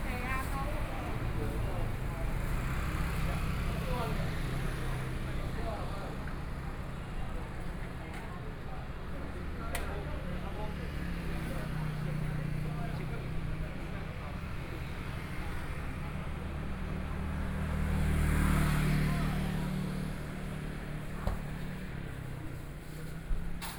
{"title": "Chengxing Rd., Dongshan Township - walking in the Street", "date": "2013-11-08 09:57:00", "description": "Walking the streets of the town, Morning Market Bazaar, Binaural recordings, Zoom H4n+ Soundman OKM II", "latitude": "24.63", "longitude": "121.79", "altitude": "10", "timezone": "Asia/Taipei"}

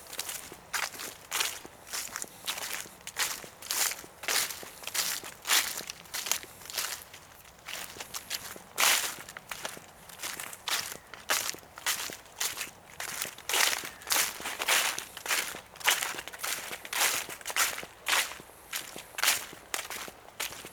{"title": "Wet zone, Pavia, Italy - a walk through the wetzone", "date": "2012-11-01 15:38:00", "description": "Sunny and warm fist of november, walking through the wetzones after a full day of rain the day before. walk on path, then in the wood over a bed of dead leaves, crossing muddy zones and several puddles.", "latitude": "45.17", "longitude": "9.20", "altitude": "56", "timezone": "Europe/Rome"}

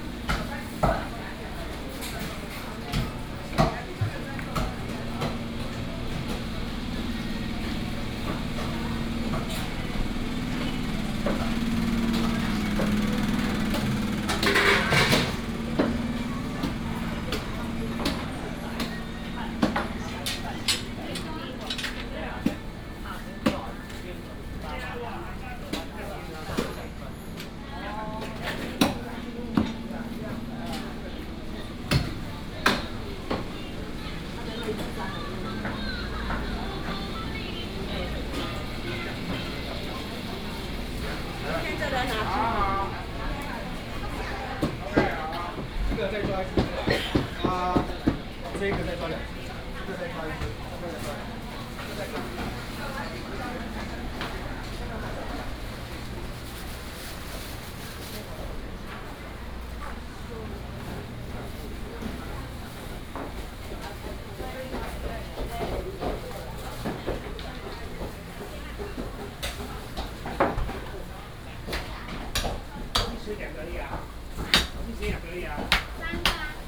{"title": "湖口鄉第一公有零售市場, Hsinchu County - Public market", "date": "2017-08-26 07:29:00", "description": "In the Public market, vendors peddling, Binaural recordings, Sony PCM D100+ Soundman OKM II", "latitude": "24.90", "longitude": "121.05", "altitude": "85", "timezone": "Asia/Taipei"}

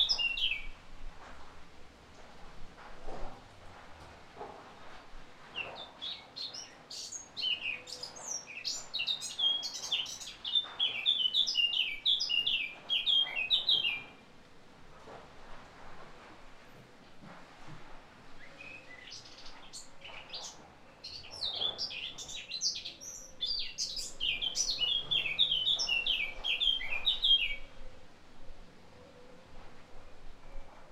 {"title": "Caen, France - Bird in my garden", "date": "2017-05-27 11:30:00", "description": "Bird singing in my garden, Caen, France, Zoom H6", "latitude": "49.17", "longitude": "-0.36", "altitude": "24", "timezone": "Europe/Paris"}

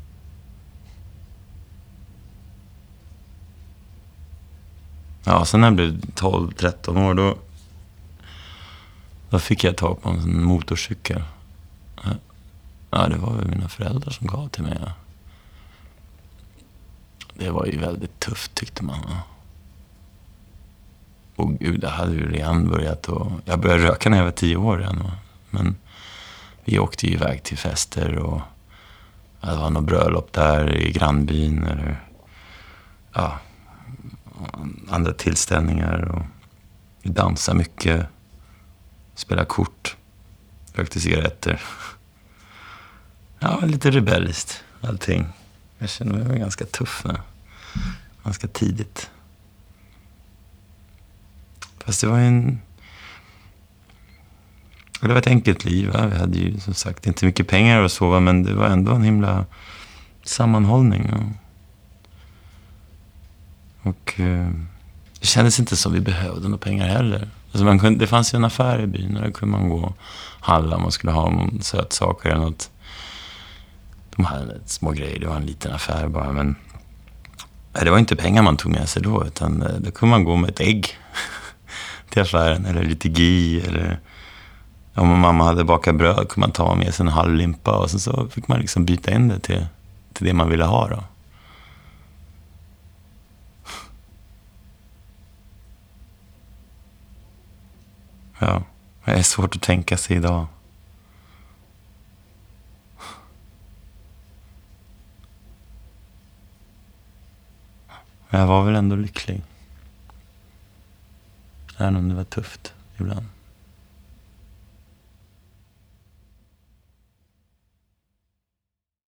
{"title": "Storgatan, Tranås, Sweden - Topology of Homecoming", "date": "2019-07-12", "description": "Topology of Homecoming\nImagine walking down a street you grew\nup on. Describe every detail you see along\nthe way. Just simply visualise it in your mind.\nAt first your walks will last only a few minutes.\nThen after a week or more you will remember\nmore details and your walks will become longer.\nFive field recordings part of a new work and memory exercise by artist Stine Marie Jacobsen 2019.\nStine Marie Jacobsen visited the Swedish city Tranås in spring 2019 and spoke to adult students from the local Swedish language school about their difficulties in learning to read and write for the first time through a foreign language. Their conversations lead her to invite the students to test an exercise which connects the limited short term memory with long term memory, which can store unlimited amounts of information.\nBy creating a stronger path between short and long term memory, perhaps more and new knowledge will symbolically and dynamically merge with one’s childhood street and culture.", "latitude": "58.03", "longitude": "14.97", "timezone": "GMT+1"}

